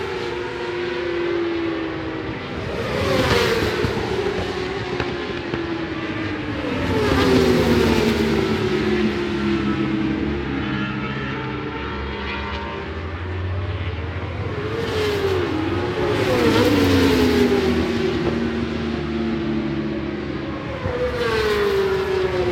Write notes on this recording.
British Superbikes 2005 ... free practice one(contd) ... the Desire Wilson stand ... one point stereo mic to minidisk ...